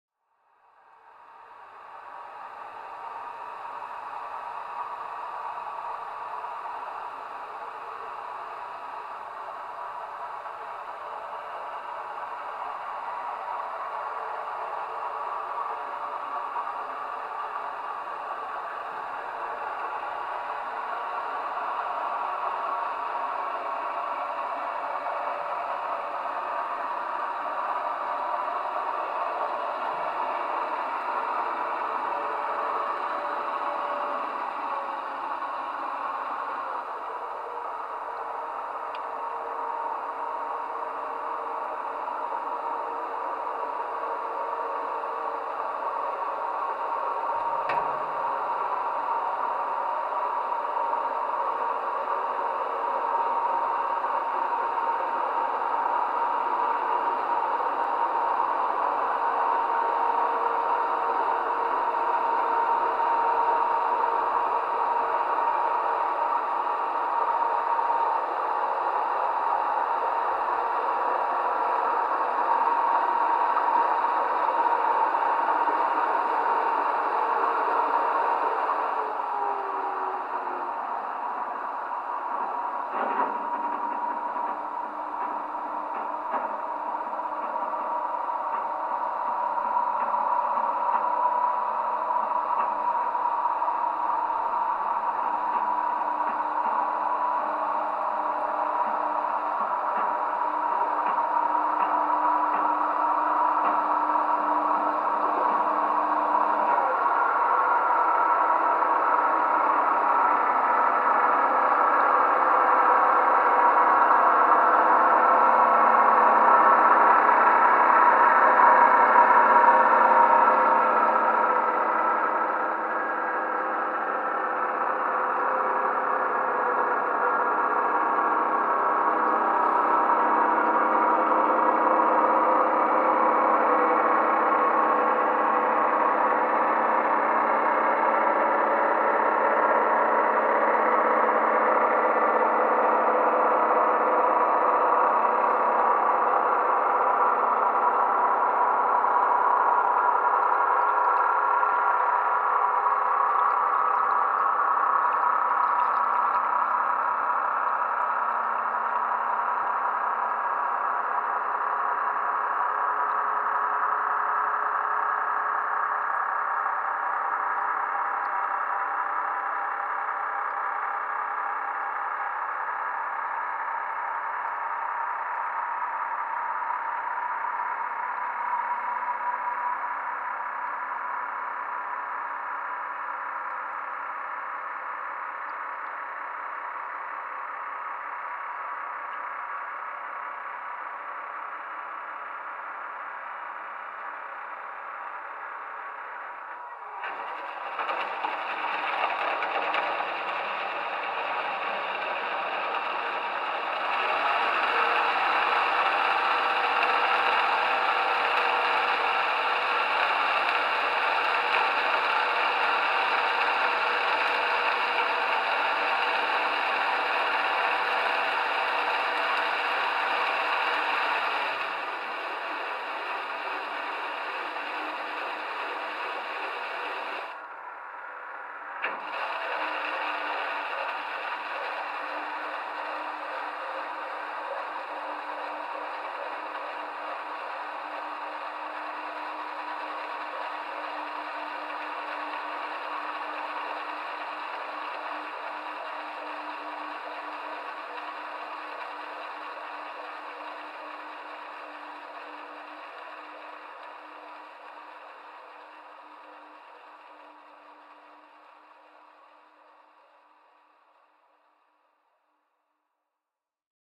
Unnamed Road, Köln, Germany - Hydrophone recording barge boat docking.
Hydrophone recording barge boat docking.
(Recorded with Zoom H5, DolphinEar Pro hydrophone)
Nordrhein-Westfalen, Deutschland, 22 March 2020, 15:45